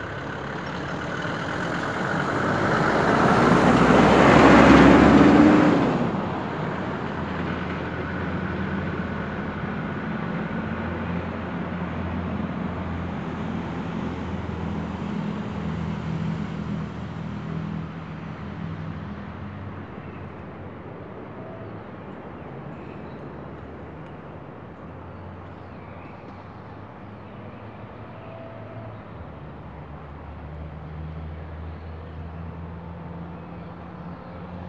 Cl., Bogotá, Colombia - less noisy soundscape.
less noisy soundscape. In this area in the early morning hours, there is little influx of vehicles, some cars and buses pass by, the recording was made near an avenue, some birds are singing in the background.
Región Andina, Colombia, 25 May 2021, 04:00